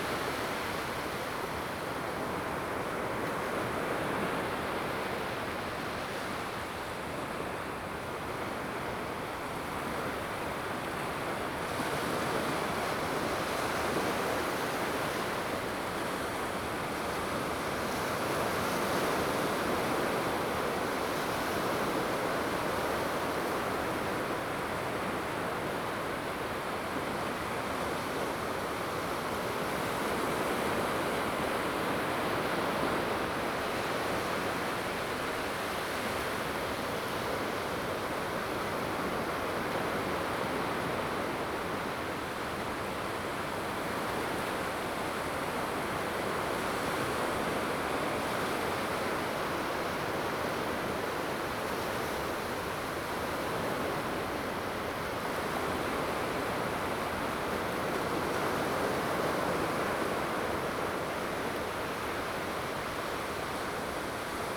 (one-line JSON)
{"title": "Qianzhouzi, Tamsui Dist., New Taipei City - Sound of the waves", "date": "2016-04-15 09:52:00", "description": "Sound of the waves\nZoom H2n MS+XY", "latitude": "25.22", "longitude": "121.44", "altitude": "13", "timezone": "Asia/Taipei"}